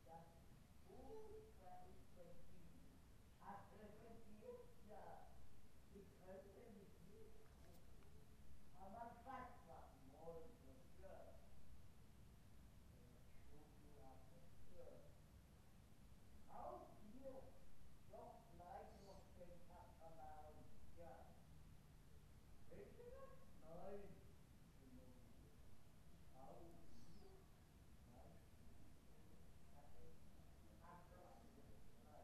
Höchstadt, Deutschland - talk during the small hours
the softness of the recording may not represent the original dynamics of the woman speaking very loudly with nonexistent people during the night, as I could hear her, as I was teaching at the geriatric home in Höchstadt then. She had incredible things to tell, & everybody was made to listen.